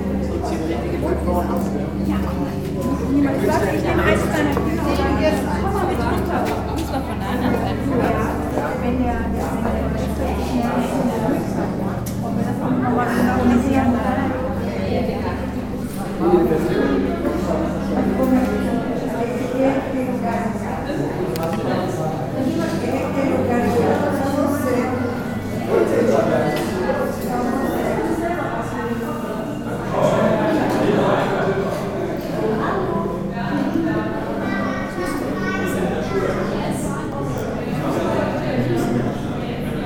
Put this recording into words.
ambiente zur eröffnungsvernissage der galerie schmidla, gesprächsfetzen von gästen, schritte, vermischt mit den klängen einer videoinstallation von egbert mittelstädt, soundmap nrw - social ambiences - sound in public spaces - in & outdoor nearfield recordings